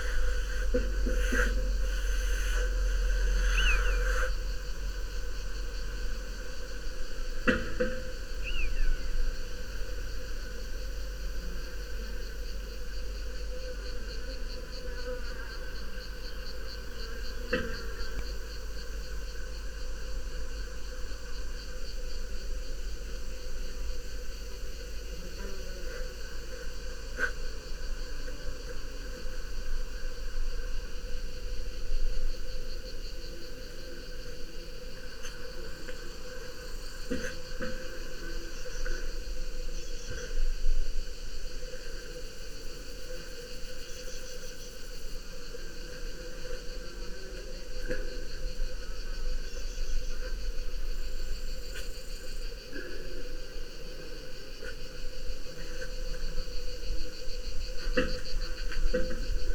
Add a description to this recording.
sounds of stone, wind, touch, broken reflector, birds, cicadas ...